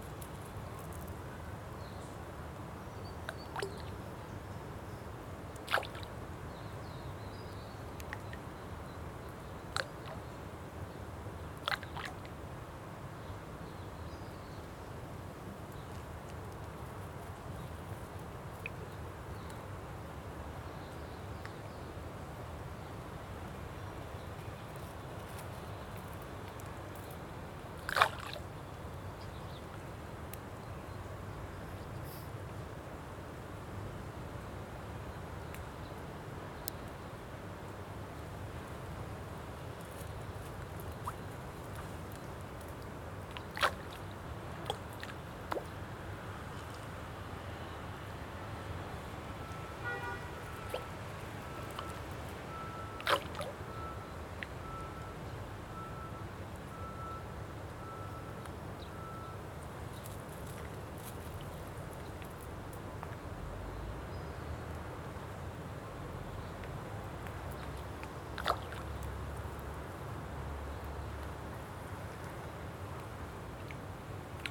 Botanical Garden Jerusalem
Water, Highway in distance, Dog barking in distance.
Jerusalem, 1 April, ~11:00